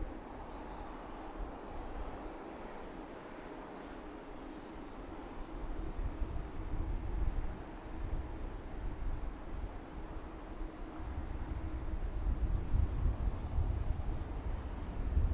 Fort Snelling State Park - MSP 30R Landings From Fort Snelling State Park
Landing aircraft at Minneapolis/St Paul International Airport on Runway 30R recorded from Fort Snelling State Park
Hennepin County, Minnesota, United States, 2 February 2022, ~15:00